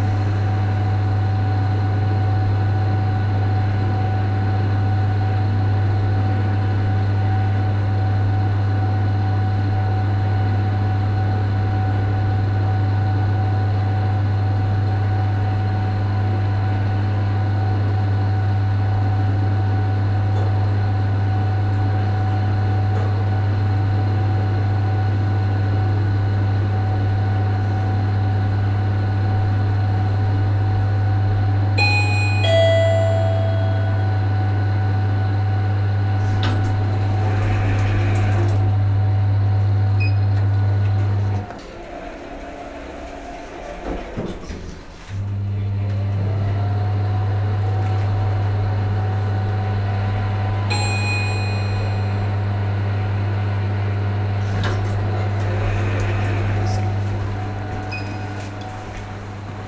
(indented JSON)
{
  "title": "Yerevan, Arménie - Using the lift",
  "date": "2018-09-02 11:40:00",
  "description": "Using the very old lifts of the Nairy 74 building. One is not working.",
  "latitude": "40.20",
  "longitude": "44.52",
  "altitude": "1169",
  "timezone": "Asia/Yerevan"
}